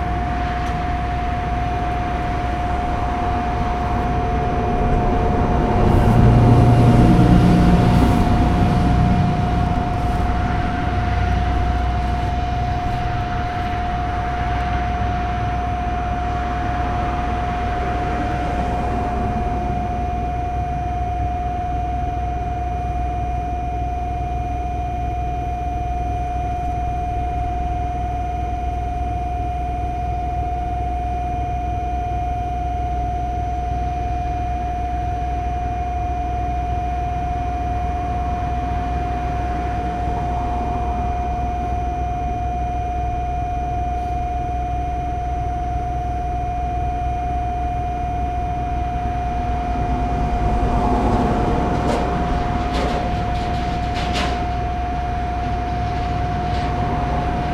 Jaroczynskiego, Poznan - in the back of a restaurant
hum and whine of commercial AC units and exhaust fans on top of a restaurant. Jaroczynskiego street is busy all day long so you can hear a lot of traffic (sony d50 internal mics)
Poznań, Poland, 24 August 2018